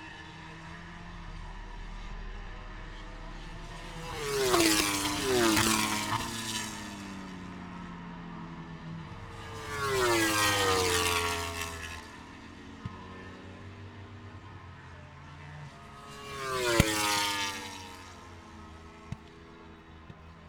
moto grand prix free practice two ... Maggotts ... Silverstone ... open lavalier mics on T bar strapped to sandwich box on collapsible chair ... windy grey afternoon ...